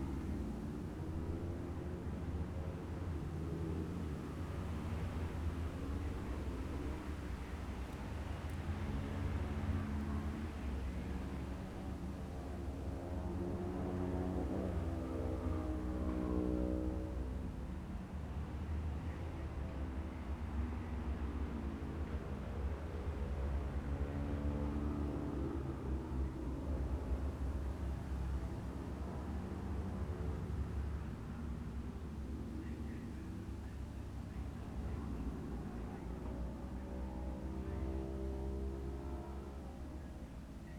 Jacksons Ln, Scarborough, UK - Gold Cup 2020 ...
Gold Cup 2020 ... Twins practice ... dpas bag MixPre3 ...
11 September 2020